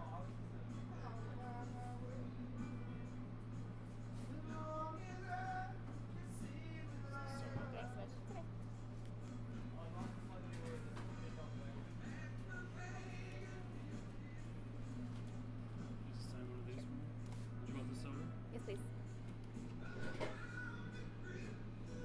{"title": "Downtown, Appleton, WI, USA - Toppers Pizza", "date": "2015-09-26 01:03:00", "description": "Late-night Toppers excursion after a long practice session. Listen to the sounds of the pizza cutter at 0:19, a squeeze bottle around 0:27, and other pizza-sounding noises. I loved the worker singing along at 0:31–he was what made me want to record in the first place. At 0:59 I get my receipt, and at 1:17 I step away from the counter to wait for my order. The sonic shift there is intense!", "latitude": "44.26", "longitude": "-88.41", "altitude": "242", "timezone": "America/Chicago"}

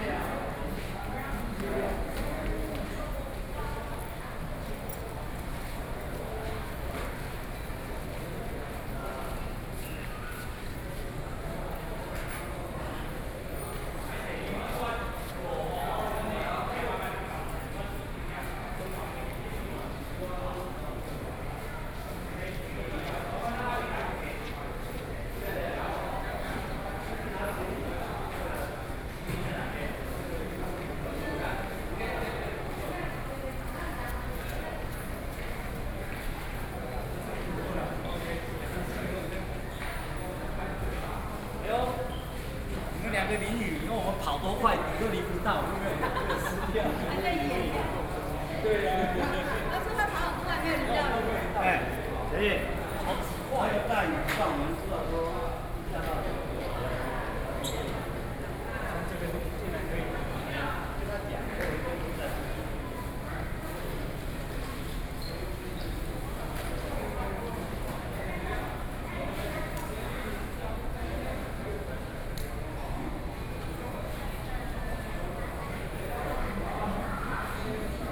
{"title": "Chiayi Station, Chiayi City - Station hall", "date": "2013-07-26 18:41:00", "description": "in the Station hall, Sony PCM D50 + Soundman OKM II", "latitude": "23.48", "longitude": "120.44", "altitude": "34", "timezone": "Asia/Taipei"}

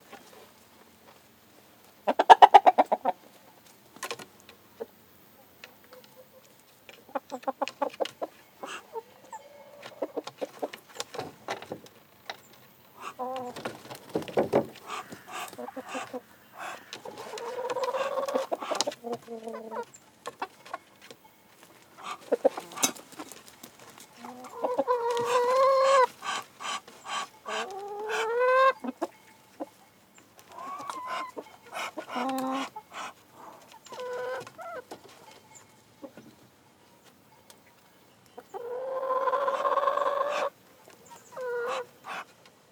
{"title": "Niederrieden, Deutschland - chicks", "date": "2012-08-09 06:00:00", "description": "chicks duck open air cage", "latitude": "48.05", "longitude": "10.20", "altitude": "654", "timezone": "Europe/Berlin"}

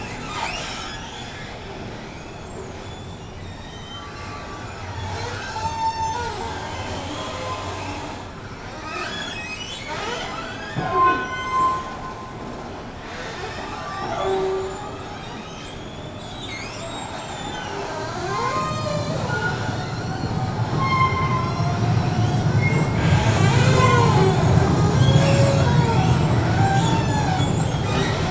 {"title": "Rondo Kaponiera, Poznan, Polska - squeaking escalator", "date": "2019-03-16 16:00:00", "description": "one of the escalators leading the a tram stop is awfully squeaky (roland r-07)", "latitude": "52.41", "longitude": "16.91", "altitude": "84", "timezone": "Europe/Warsaw"}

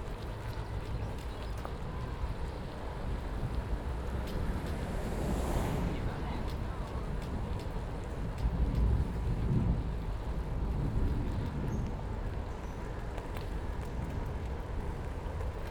{"title": "Ascolto il tuo cuore, città. I listen to your heart, city Chapter LXXXIV - Friday soundbike II on the banks of the Po River in the days of COVID19 Soundbike", "date": "2020-07-03 16:28:00", "description": "\"Friday soundbike II on the banks of the Po River in the days of COVID19\" Soundbike\"\nChapter LXXXIV of Ascolto il tuo cuore, città. I listen to your heart, city\nFriday, July 3rd 2020. Biking on the bank of Po River, Valentino park, one hundred-fifteen days after (but day sixty-one of Phase II and day forty-eight of Phase IIB and day forty-two of Phase IIC and day 19th of Phase III) of emergency disposition due to the epidemic of COVID19.\nStart at 4:28 p.m. end at 5:15 p.m. duration of recording 46’56”\nThe entire path is associated with a synchronized GPS track recorded in the (kmz, kml, gpx) files downloadable here:\nGo to Chapter LXXXIV \"Friday soundbike on the banks of the Po River in the days of COVID19\" Soundbike\", Friday, May 22th 2020. Similar path and time.", "latitude": "45.04", "longitude": "7.69", "altitude": "227", "timezone": "Europe/Rome"}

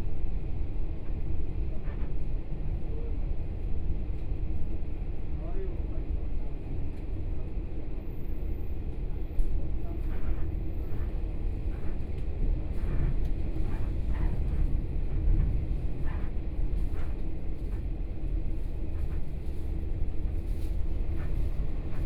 {"title": "Xinyi District, Taipei - Chu-Kuang Express", "date": "2013-11-08 07:03:00", "description": "from Taipei Station to Songshan Station, Train broadcast messages, Binaural recordings, Zoom H4n+ Soundman OKM II", "latitude": "25.05", "longitude": "121.56", "altitude": "12", "timezone": "Asia/Taipei"}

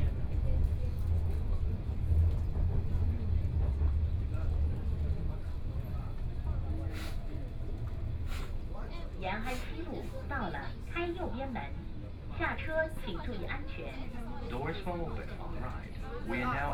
{"title": "Changning District, Shanghai - Line 4 (Shanghai Metro)", "date": "2013-11-23 15:03:00", "description": "from Zhongshan Park Station to Yishan Road Station, Binaural recording, Zoom H6+ Soundman OKM II", "latitude": "31.21", "longitude": "121.41", "altitude": "14", "timezone": "Asia/Shanghai"}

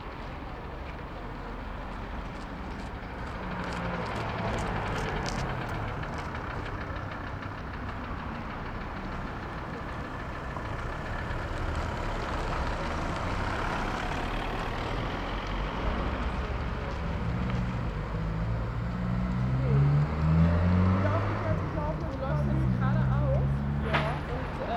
{"title": "Berlin: Vermessungspunkt Friedelstraße / Maybachufer - Klangvermessung Kreuzkölln ::: 18.01.2011::: 16:56", "date": "2011-01-18 16:56:00", "latitude": "52.49", "longitude": "13.43", "altitude": "39", "timezone": "Europe/Berlin"}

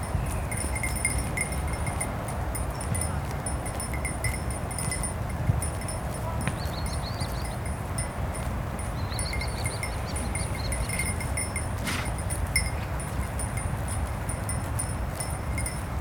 {"title": "Rue Dinetard, Toulouse, France - grazing sheep", "date": "2022-04-15 19:00:00", "description": "grazing sheep, bird, highway in the background\nCapation : ZOOMH4n", "latitude": "43.62", "longitude": "1.48", "altitude": "137", "timezone": "Europe/Paris"}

